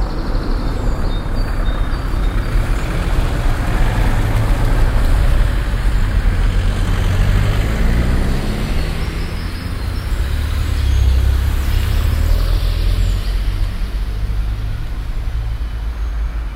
cologne, south, an der bottmuehle, strassenecke
soundmap: cologne/ nrw
strassenecke morgens, fahrzeuge, kinderwagen, fahrräder, kinder und mütter
project: social ambiences/ listen to the people - in & outdoor nearfield recordings